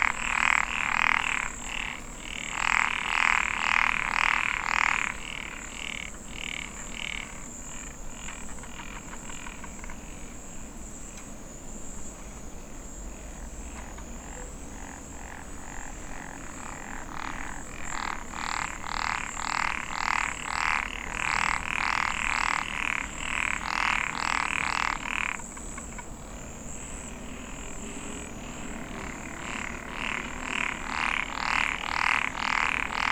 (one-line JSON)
{"title": "Rainy season at 상중도 습지 (Sang Jung-do marsh)", "date": "2018-07-04 22:00:00", "description": "...after a long dry period there are some summer rain events in Gangwon-do...the days of rain stir amphibian activity in the small remnant wetland...still, the water level has dropped due to the nearby 위엄 dam responding to summer electricity demand in nearby Seoul...", "latitude": "37.90", "longitude": "127.72", "altitude": "78", "timezone": "Asia/Seoul"}